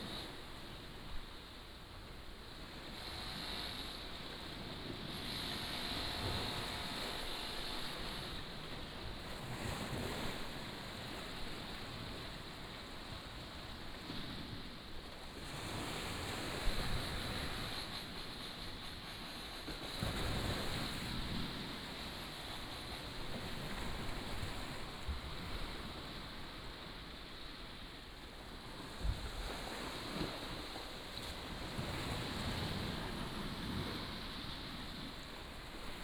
Ren'ai Rd., Nangan Township - On the embankment
Sound of the waves, Construction sound, small village